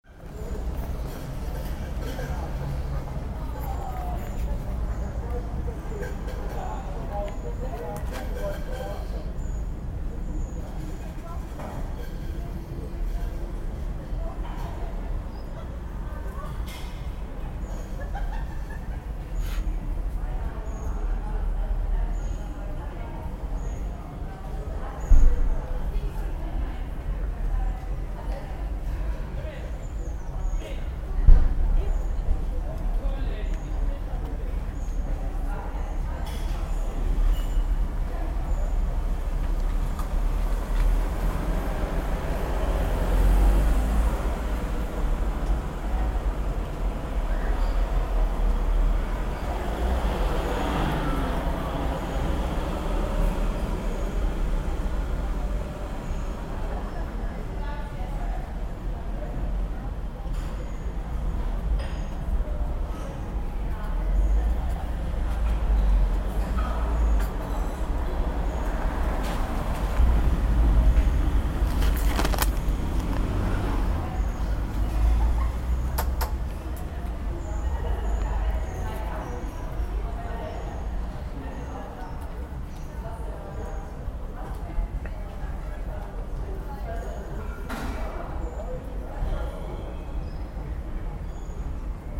cologne, street café, traffic
café am roemerpark, sitting outside. traffic, laughter, café atmosphere inside/outside. recorded june 4, 2008. - project: "hasenbrot - a private sound diary"
Cologne, Germany